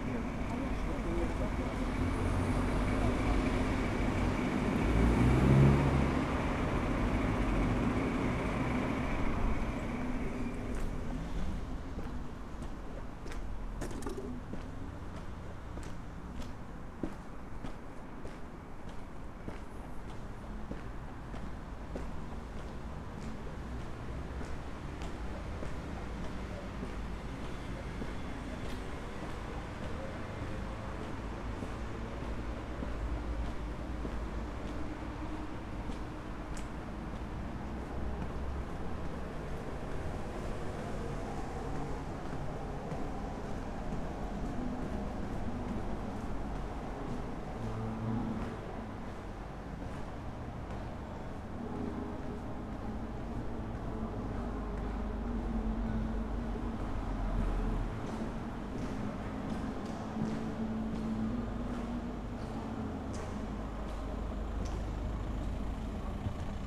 cologne, stadtgarten, sbahn haltestelle - station walk
short walk along the stracks and down the station, late evening
20 September, 22:10